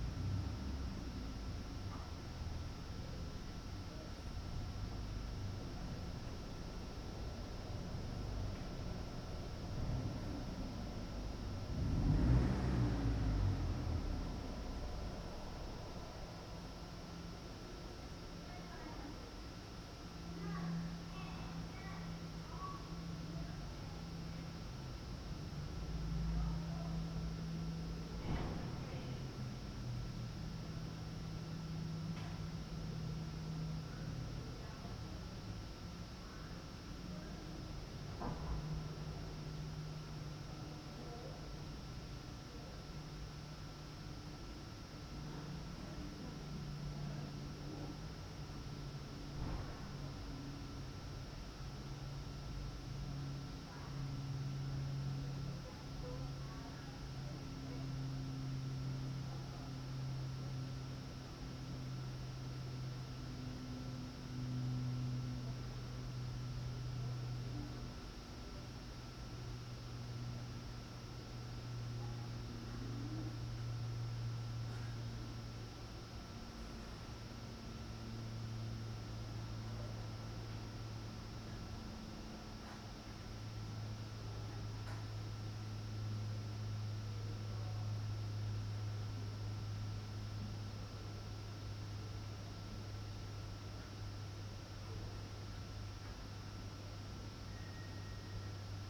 "Round noon with plane, howling dog and bells in the time of COVID19" Soundscape
Chapter CXXVIII of Ascolto il tuo cuore, città. I listen to your heart, city
Thursday, August 27th, 2020. Fixed position on an internal terrace at San Salvario district Turin five months and seventeen days after the first soundwalk (March 10th) during the night of closure by the law of all the public places due to the epidemic of COVID19.
Start at 11:49 a.m. end at 00:11 p.m. duration of recording 30'00''
27 August, 11:49